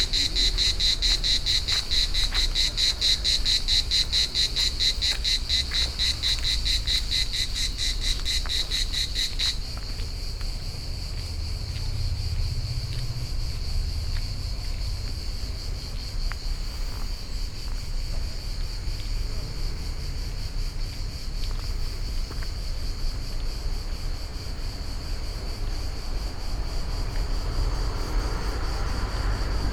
(binaural recording)
crickets make some serious sounds in Rome. As if they were rubbing two coarse metal files against each other. The closest one gets silent for a while, making room for roaring motorbikes, street noise and an approaching ambulance.